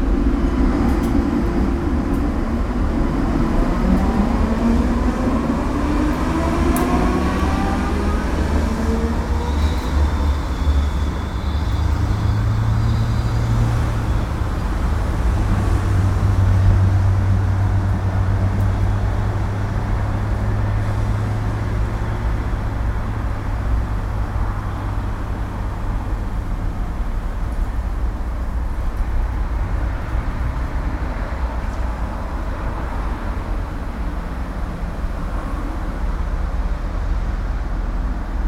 Cologne, Riehl, An der Schanz - Traffic
A tram leaving, cars passing by, a plain flying over, another tram arriving and leaving